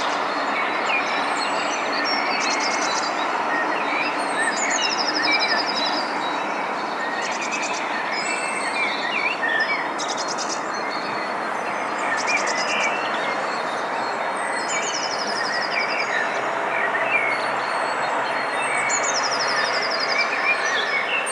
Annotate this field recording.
vogelsang, straße, straßenbahn